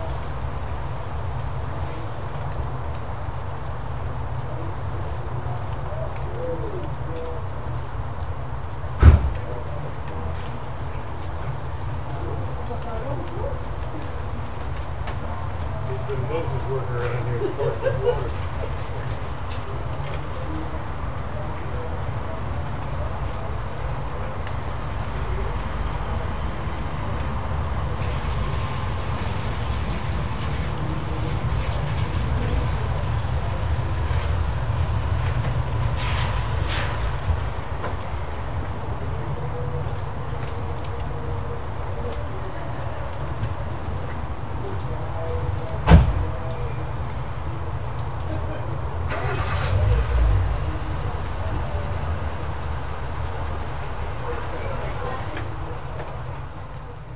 Outside The Church Inn, Prestwich, Manchester - Church Bell/Pub/Rain Prestwich
Outside The Church Inn pub. The church next door strikes 6pm (although how three sets of three rings followed by nine rings signifies 6pm I'm not sure!) Also a very rare, for these parts, an American accent from a passer by can be heard. Pub noise from inside the pub is also heard, it was pouring with rain.